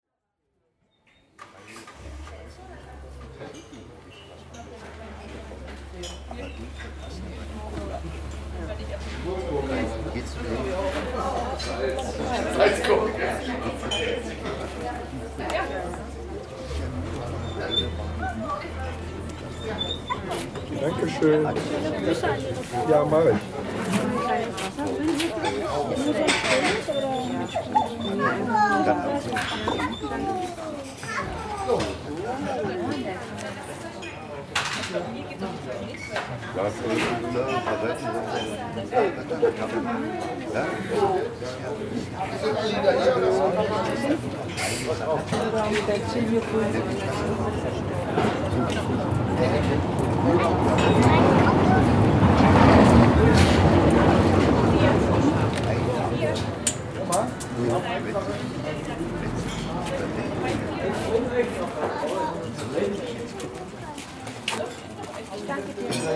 {"title": "Bahnhofstraße, Eichwalde, Deutschland - Marios Eiscafé", "date": "2019-04-19 17:43:00", "description": "Marios Eiscafé / Straßencafé H4n/ Protools", "latitude": "52.37", "longitude": "13.62", "altitude": "37", "timezone": "Europe/Berlin"}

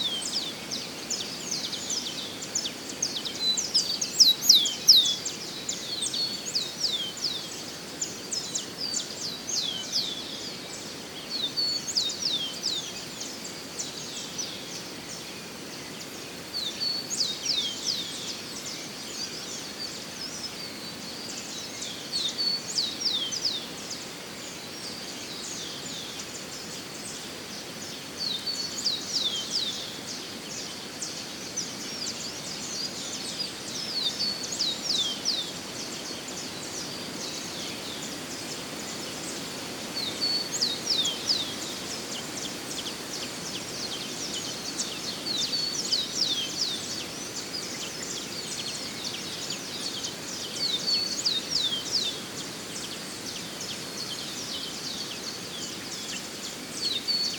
{"title": "Muriqui Track - aurora", "date": "2017-01-26 05:33:00", "description": "recording in the Atlantic Forest by the sunrise, hoping to hear the muriqui monkey, a highly endangered species", "latitude": "-20.91", "longitude": "-42.54", "altitude": "873", "timezone": "America/Sao_Paulo"}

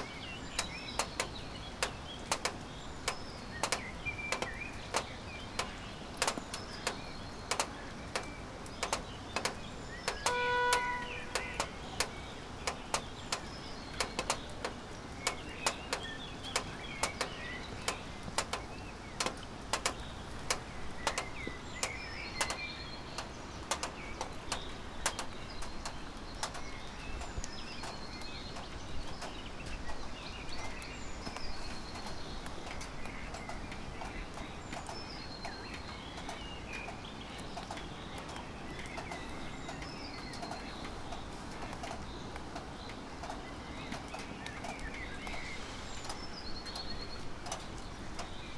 {"title": "bridge, river drava, maribor - rain drops on blue metal bridge", "date": "2014-04-21 18:50:00", "latitude": "46.57", "longitude": "15.61", "altitude": "259", "timezone": "Europe/Ljubljana"}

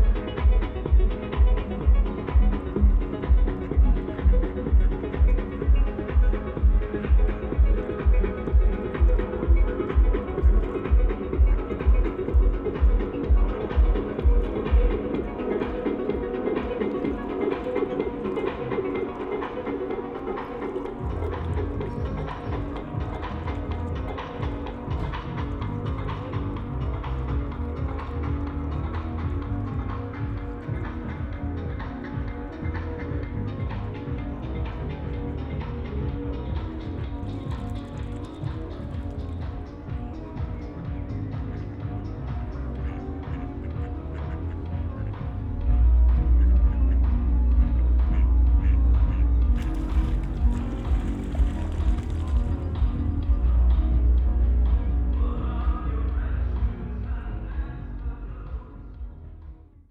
{"title": "Funkhaus Nalepastr, Berlin - rave impact", "date": "2013-06-22 21:40:00", "description": "Nalepastr, area of the former national GDR broadcast, river Spree, sonic impact of a rave going on all day half a kilometer away", "latitude": "52.48", "longitude": "13.50", "altitude": "32", "timezone": "Europe/Berlin"}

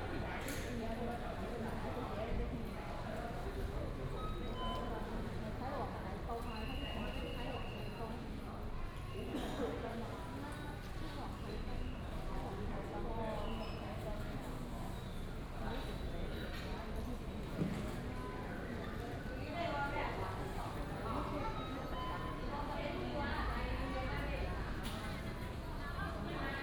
{"title": "Dajia Station, 大甲區大甲里 - In the station hall", "date": "2017-03-24 16:36:00", "description": "In the station hall", "latitude": "24.34", "longitude": "120.63", "altitude": "59", "timezone": "Asia/Taipei"}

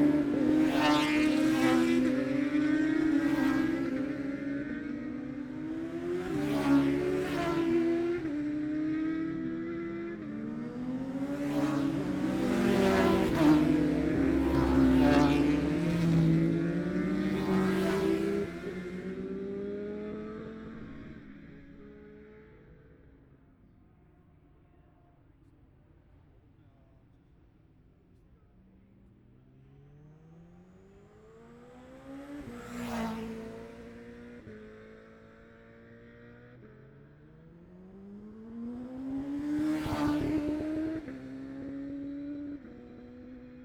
{"title": "Jacksons Ln, Scarborough, UK - olivers mount road racing 2021 ...", "date": "2021-05-22 11:03:00", "description": "bob smith spring cup ... classic superbikes practice ... luhd pm-01 mics to zoom h5 ...", "latitude": "54.27", "longitude": "-0.41", "altitude": "144", "timezone": "Europe/London"}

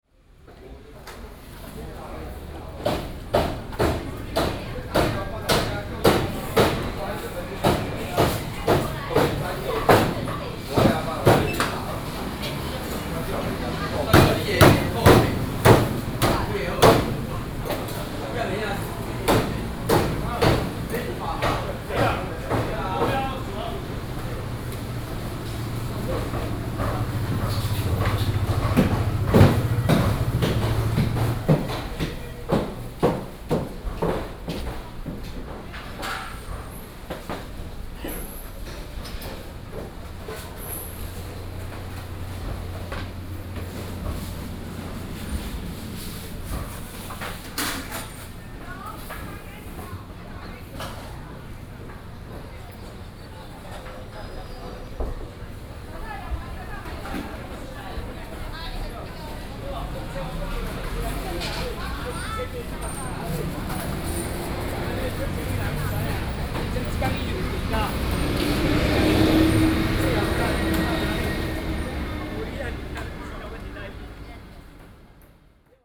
{"title": "黃石市場, Banqiao Dist. - In the meat market", "date": "2012-06-17 07:34:00", "description": "In the meat market\nBinaural recordings\nSony PCM D50 + Soundman OKM II", "latitude": "25.01", "longitude": "121.46", "altitude": "17", "timezone": "Asia/Taipei"}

